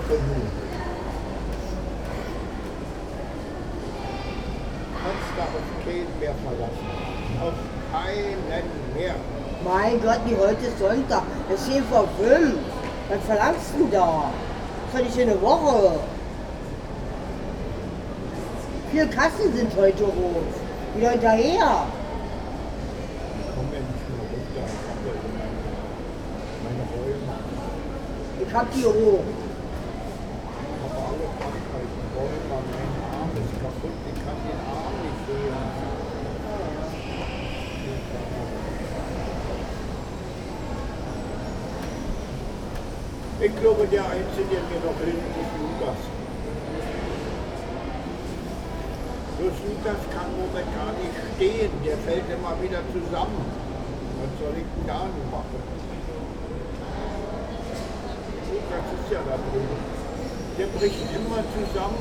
28.06.2009 16:50, ostbahnhof entry hall, coversation at the waiting room about various aspects of life (& death)
28 June 2009, 16:50